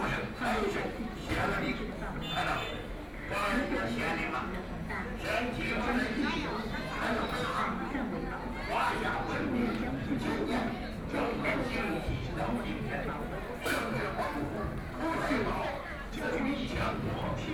Yuyuan Garden, Shanghai - Yuyuan Garden
walking around the Yuyuan Garden, The famous tourist attractions, Very large number of tourists, Binaural recording, Zoom H6+ Soundman OKM II